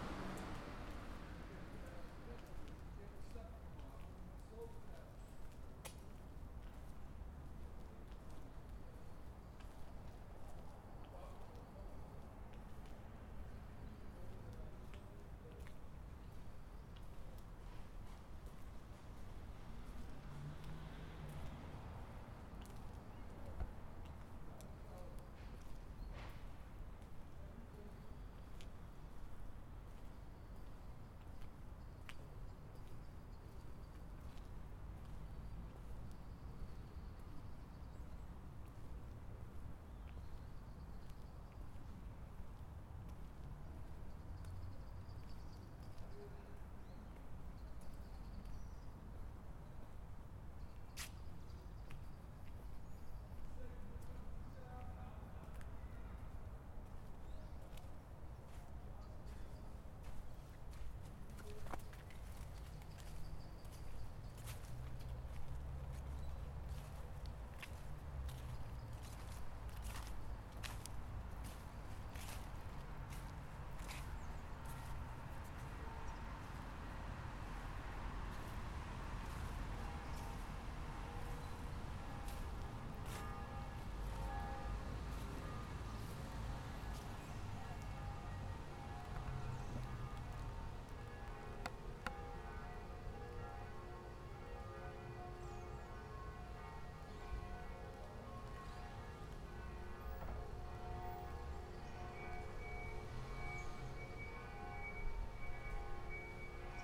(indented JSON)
{
  "title": "Thérèse Schwartzeplein, Amsterdam, Nederland - Thérèse Schwartzeplein",
  "date": "2013-11-01 22:00:00",
  "description": "Het Therese Schwarzplein heeft heel bijzondere akoestische eigenschappen. Geluiden vanuit de directe omgeving worden geblockt door de gevels die het plein bijna helemaal omsluiten. Het plein is daardoor in feite een gigantisch oor dat luistert naar de verre geluiden van de stad. We horen in deze opname geruis van de ring, kerkklokken, politiesirenes etc.",
  "latitude": "52.35",
  "longitude": "4.90",
  "altitude": "3",
  "timezone": "Europe/Amsterdam"
}